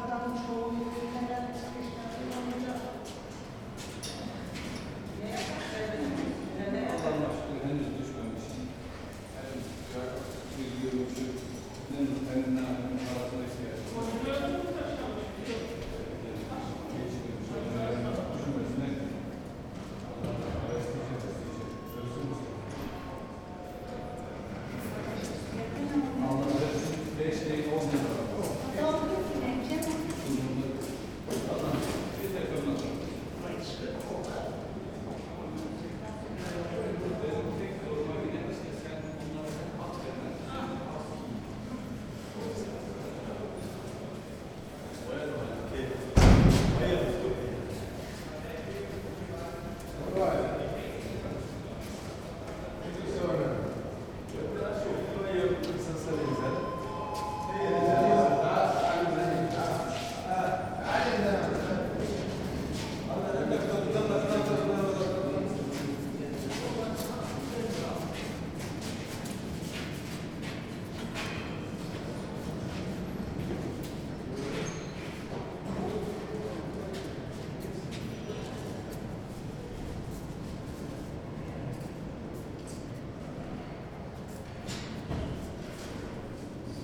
berlin, urban hospital, ground level, 6 elevators, people moving, shuffling, steps
Berlin, Germany, 11 January 2010, 14:45